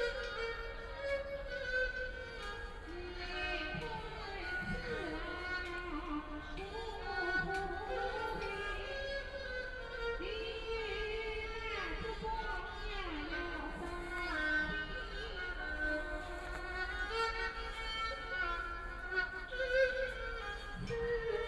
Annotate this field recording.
Several elderly people are singing traditional music, Erhu, Binaural recordings, Zoom H6+ Soundman OKM II